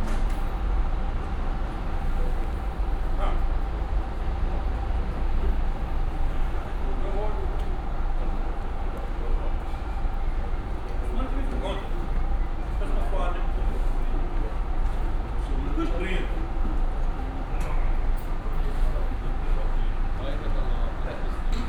Funchal, Rodoeste bus terminal - drivers talking
(binaural) bus drivers talking before taking off towards their destination. their buses idling behind them.
Funchal, Portugal, 8 May 2015